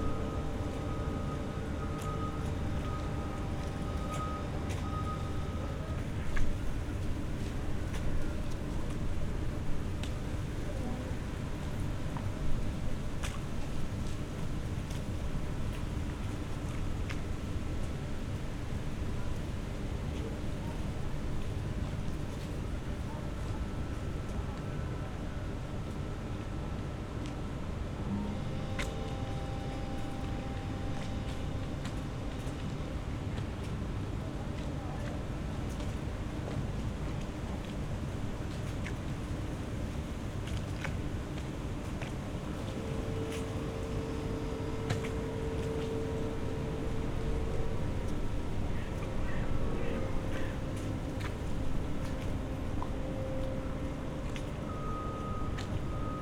Berlin, Plänterwald, Spree - at the river Spree, summer Sunday morning
place revisited on a summer Sunday morning, cement factory at work, a boat is passing-by, river sounds
(SD702, Audio technica BP4025)